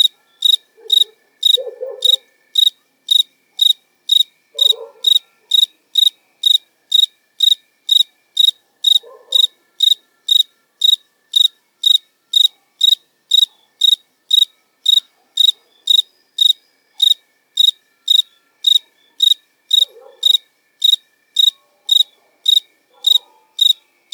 {
  "title": "Tepoztlán, Mexico - Cricket singing during the night",
  "date": "2013-01-02 22:00:00",
  "description": "In the small village of Tepoztlan (Mexico), close recording of a cricket.\nAmbience of the village in background (dogs sometimes, light music, church bell far away).\nMono Recording by a Schoeps CCM41\nOn a Sound Devices 788T\nRx Noise applied\nRecorded on 2nd of January 2013",
  "latitude": "18.99",
  "longitude": "-99.10",
  "altitude": "1728",
  "timezone": "America/Mexico_City"
}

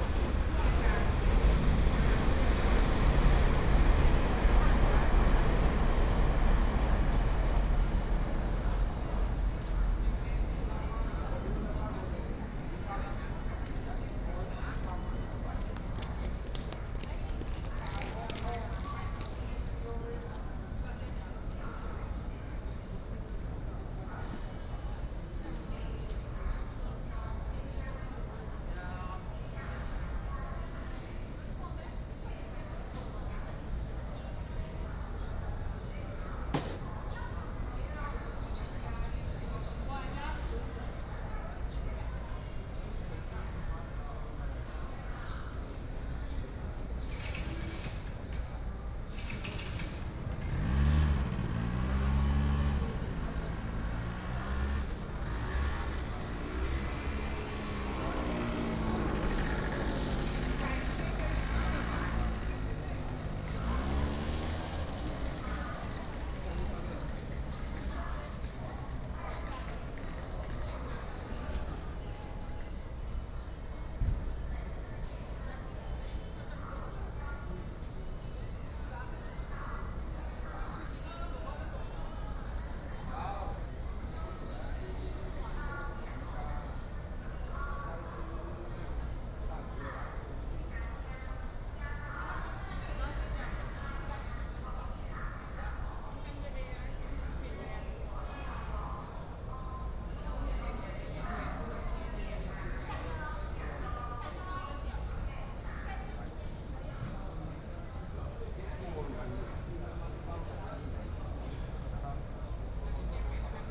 {
  "title": "Jiaoxi Station, 礁溪鄉 - In the Square",
  "date": "2014-07-07 09:55:00",
  "description": "Sitting in front of the station square, Very hot weather, Traffic Sound",
  "latitude": "24.83",
  "longitude": "121.78",
  "altitude": "13",
  "timezone": "Asia/Taipei"
}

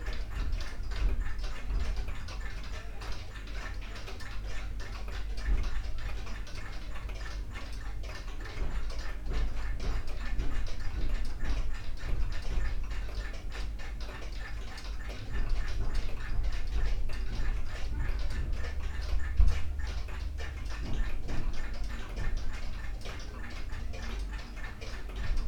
{
  "title": "clockmaker, gosposka ulica, maribor - chronos synchronicity",
  "date": "2014-04-04 14:08:00",
  "latitude": "46.56",
  "longitude": "15.65",
  "altitude": "274",
  "timezone": "Europe/Ljubljana"
}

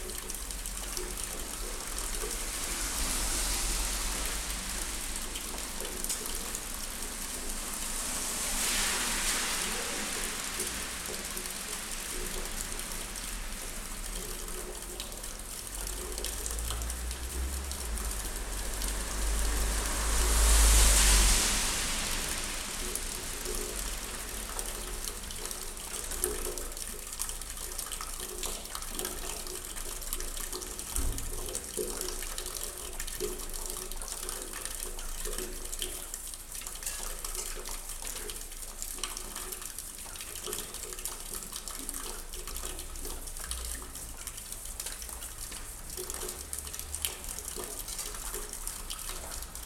{
  "title": "Bürgergasse, Graz, Austria - esc-rain-resonance-060819 14h20",
  "date": "2016-08-19 14:20:00",
  "description": "Steady medium-frequency rain is falling onto eaves and metal gutters in the courtyard of Palais Trautmansdorff, in front of esc media art lab. Water running in the floor gutter makes bubbly sounds and produces a particular strong tubular resonance.",
  "latitude": "47.07",
  "longitude": "15.44",
  "altitude": "365",
  "timezone": "Europe/Vienna"
}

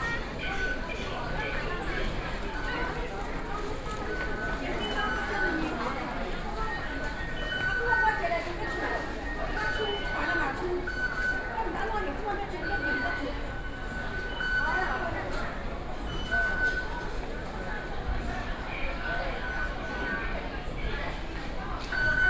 {
  "title": "Taipei city, Taiwan - Into the MRT stations",
  "date": "2012-11-10 15:52:00",
  "latitude": "25.04",
  "longitude": "121.50",
  "altitude": "7",
  "timezone": "Asia/Taipei"
}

